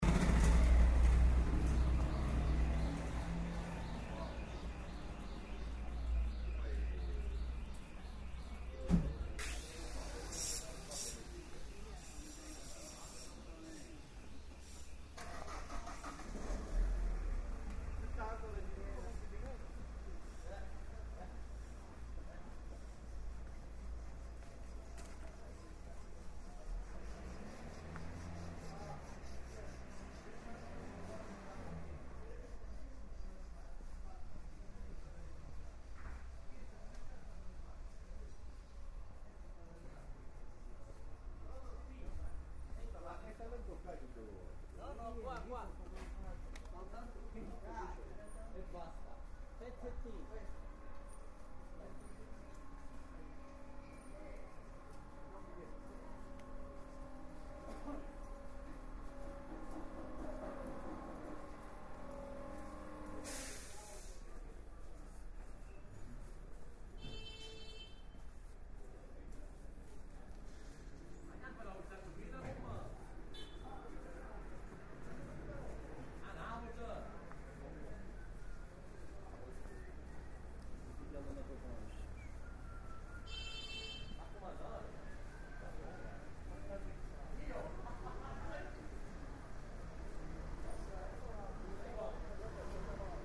via Calderai, Palermo (romanlux)
Via Calderai è la via dei fabbri e dei pentolai a Palermo. (EDIROL R-09HR)
SIC, Italia